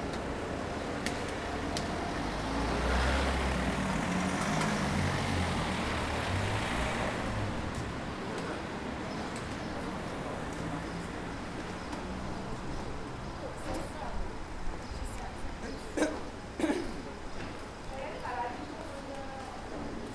Rue Lanne, Saint-Denis, France - Intersection of R. Legion dhonneur + R. Lanne
This recording is one of a series of recording, mapping the changing soundscape around St Denis (Recorded with the on-board microphones of a Tascam DR-40).